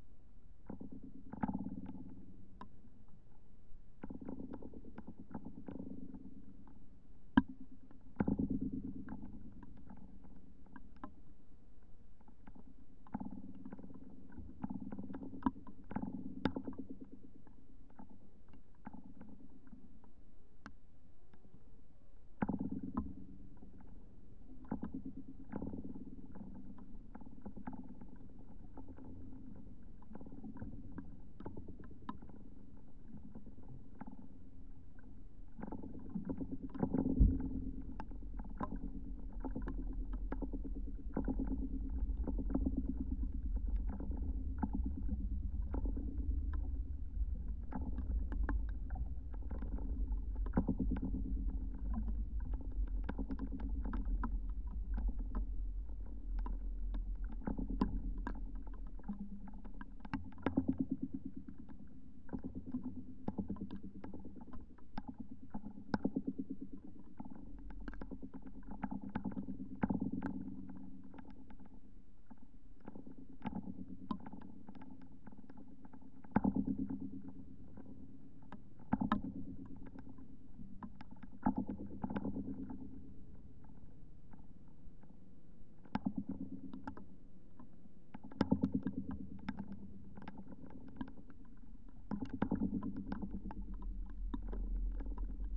Sudeikių sen., Lithuania, paracord installed
christmas eve listening to paracord installation while drinking green tea in the wood. 15 m long paracord rope tightened between two trees with contacy microphobes atached. light snow.